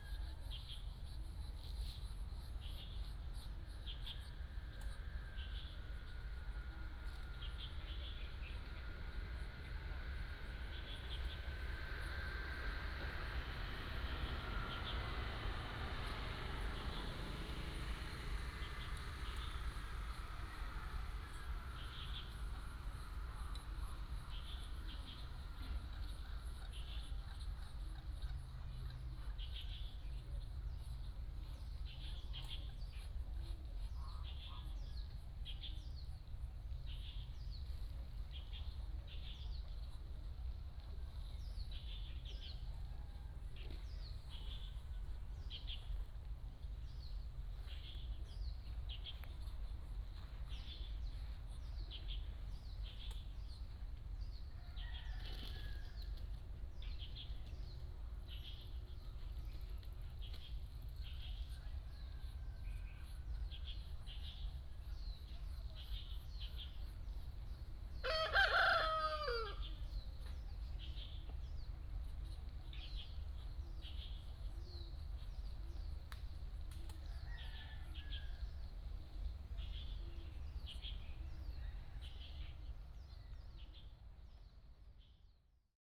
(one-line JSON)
{"title": "天福村, Hsiao Liouciou Island - Small village", "date": "2014-11-02 08:20:00", "description": "Small village, Birds singing, Ducks and geese, Chicken sounds", "latitude": "22.33", "longitude": "120.36", "altitude": "37", "timezone": "Asia/Taipei"}